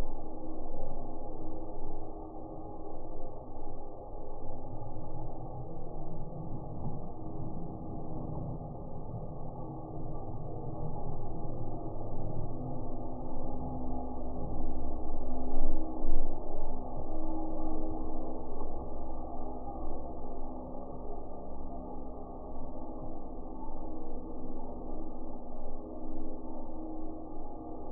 Metalic Bridge, Cais dos Mercanteis, Aveiro, Portugal - Metalic Bridge resonating
Metalic bridge resonating with people footsteps and boats passing by in the canal. Recorded with an SD mixpre6 and a LOM Geofon attached to the suspended bridge mast.
Baixo Vouga, Centro, Portugal